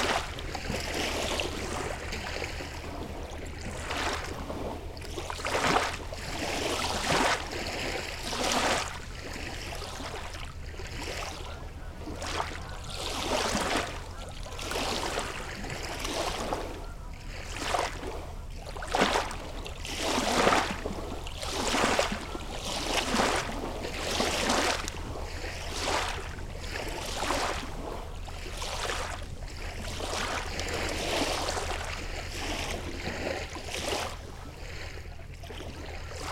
A big industrial boat is passing by on the Seine river, by night. We don't see anything but we ear it.
Muids, France, 20 September 2016, ~21:00